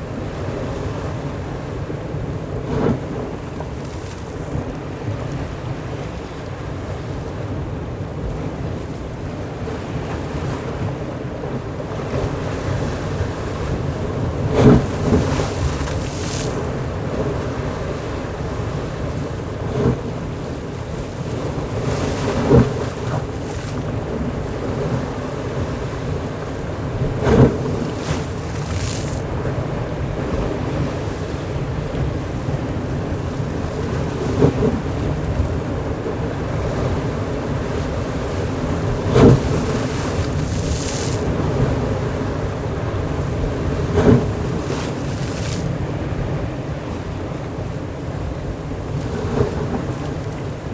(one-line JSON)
{"title": "Russia, The White Sea - The White Sea, Cape of Sharapov", "date": "2012-06-17 16:30:00", "description": "The White Sea, Cape of Sharapov. The recording was made during a trip to shore the White Sea.\nЗапись сделана во время путешествия по берегу Белого моря. Мыс Шарапов.\nRecorded on Zoom H4n", "latitude": "66.23", "longitude": "34.09", "timezone": "Europe/Moscow"}